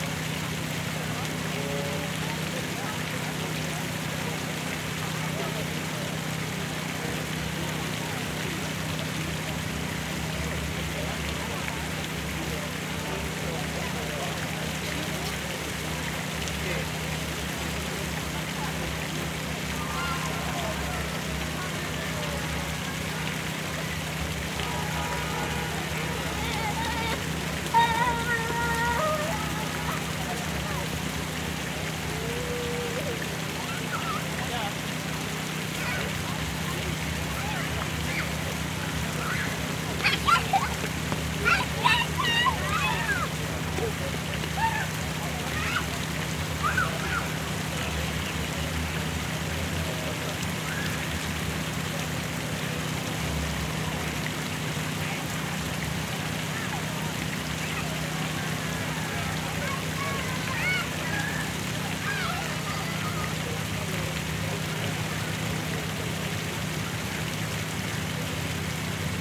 W 40th St, New York, NY, USA - Josephine Shaw Lowell Memorial Fountain
Sounds of water and kids running around the Josephine Shaw Lowell Memorial Fountain, Bryant Park.